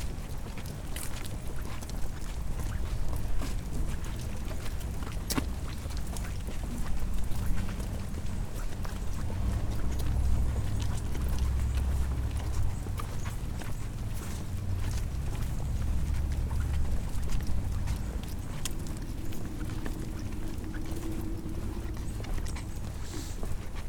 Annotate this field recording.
equipment used: M-Audio Microtrack II, EAMT 399/E - class soundwalk